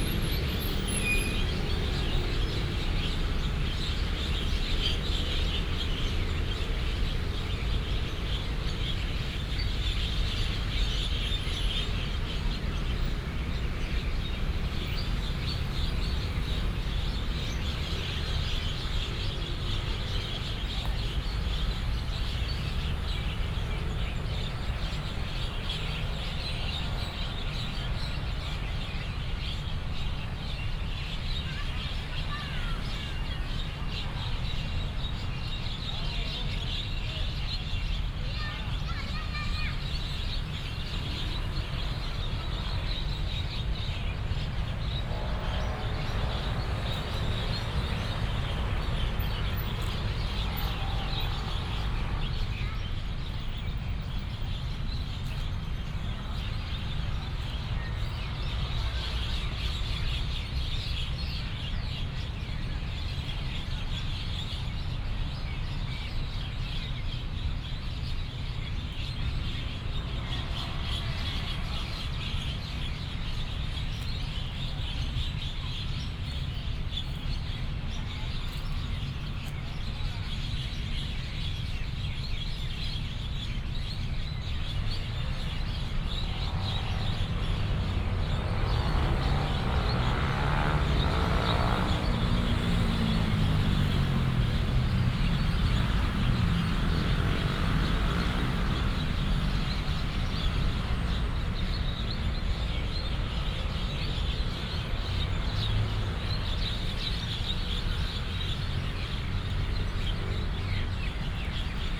十九甲健康公園, Dali Dist., Taichung City - Walking in the park
Walking in the park, Traffic sound, Bird call, Off hours, Binaural recordings, Sony PCM D100+ Soundman OKM II
Dali District, Taichung City, Taiwan, 1 November 2017, 17:31